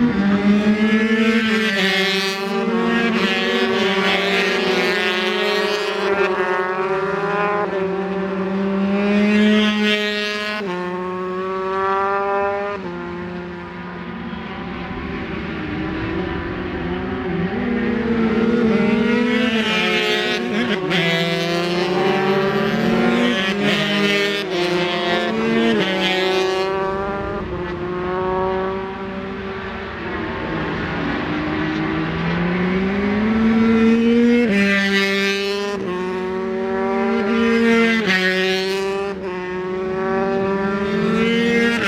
Stapleton Ln, Leicester, UK - British Superbikes 2004 ... 125 Qualifying ...
British Superbikes 2004 ... 125 qualifying ... Edwina's ... one point stereo mic to minidisk ...